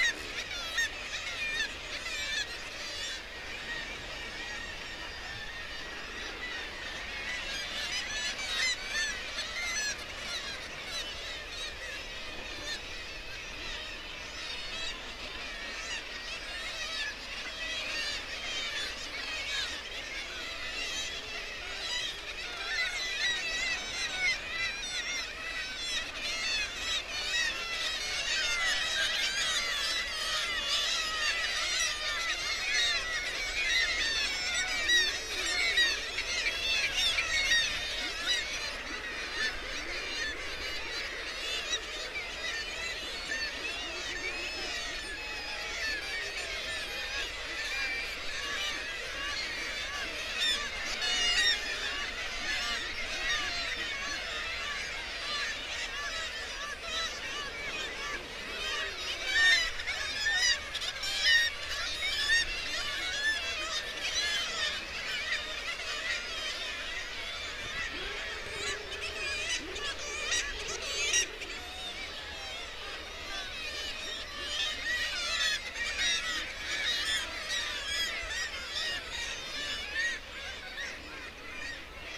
Bempton, UK - Kittiwake soundscape ...
Kittiwake soundscape ... RSPB Bempton Cliffs ... kittiwake calls and flight calls ... gannet and guillemot calls ... lavalier mics on T bar on the end of a fishing landing net pole ... warm ... sunny morning ...